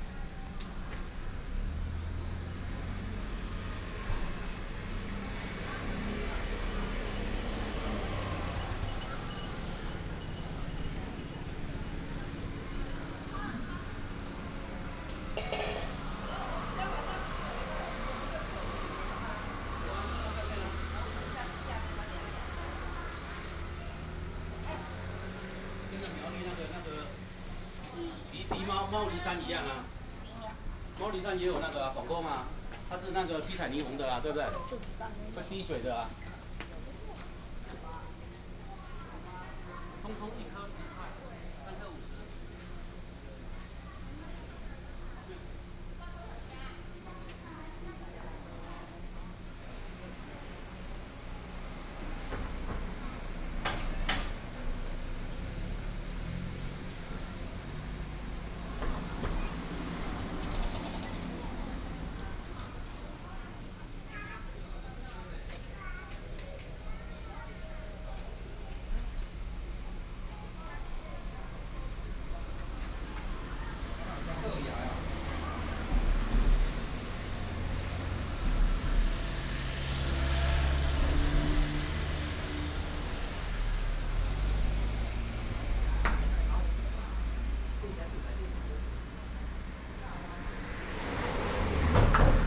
traffic sound, At the entrance to the sightseeing shops, Tourists